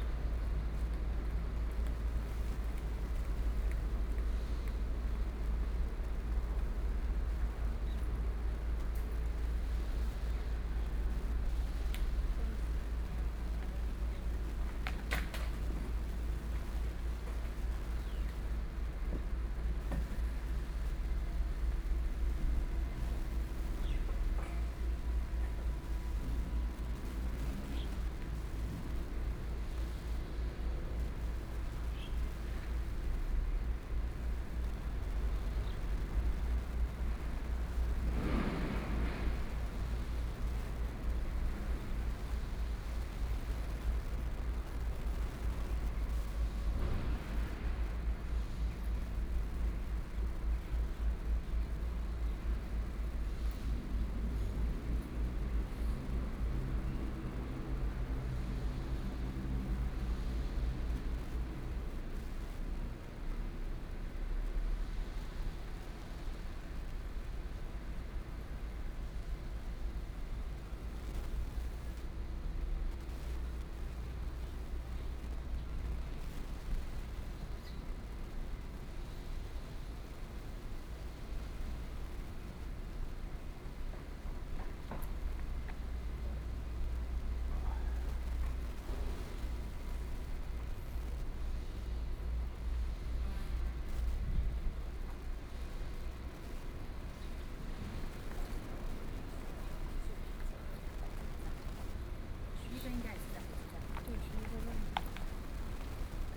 Puxin, Taoyuan - Station platforms
in the Station platforms, Sony PCM D50+ Soundman OKM II
Yangmei City, Taoyuan County, Taiwan